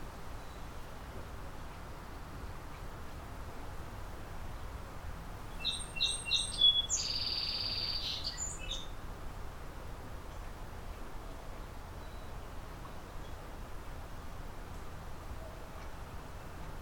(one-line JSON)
{"title": "Juniper Island, ON, Canada - Juniper Island Porch", "date": "2019-06-12 11:45:00", "description": "On the porch of the Juniper Island Store (before it opened for the summer season), looking out over Stony Lake, on a warm sunny day. Recorded with Line Audio OM1 omnidirectional microphones and a Zoom H5.", "latitude": "44.55", "longitude": "-78.15", "timezone": "GMT+1"}